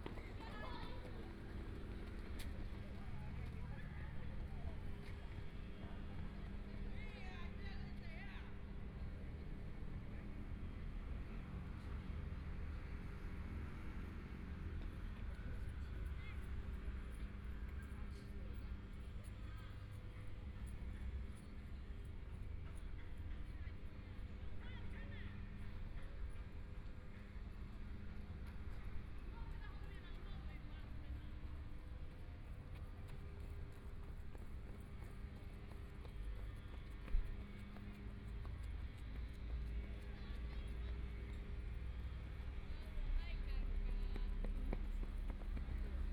花崗山綜合田徑場, Hualien City - Running voice
Students of running activities, Mower noise, Birds sound
Binaural recordings
Zoom H4n+ Soundman OKM II
Hualien County, Taiwan, 24 February, ~15:00